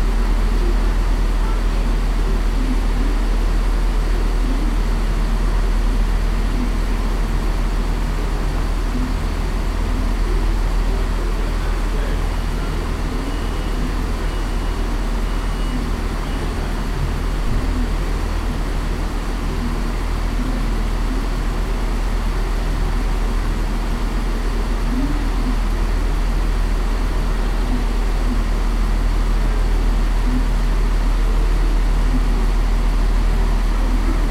bahnhof lichtenberg, Berlin, germany - departure
take the night train to budapest.
on the platform.
2 x dpa 6060.